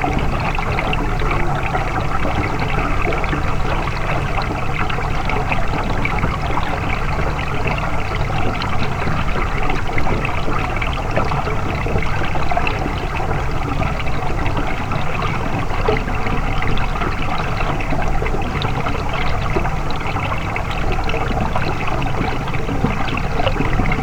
Bonaforth Schleuse Kanurutsche
World Listening Day, Bonaforther Schleuse, Kanurutsche, Hydrophone + ZoomH4